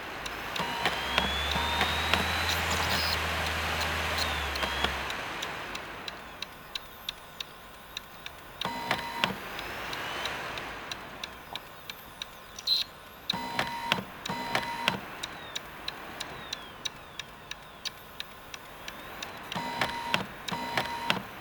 Poznan, Mateckiego street - unreadable cd part 2
cd drive tries to read a different cd. last attempt to get the data. time to give up for good. the glitchy sounds vary from cd to cd but I have no idea what drives the different behavior of the drive. The cd don't seem to be scratched or anything. One can basically get a whole range of sounds just by switching the cd. this particular one reminds me a bit of Oval's track called Textuell. (roland r-07)
Poznań, Poland, April 2019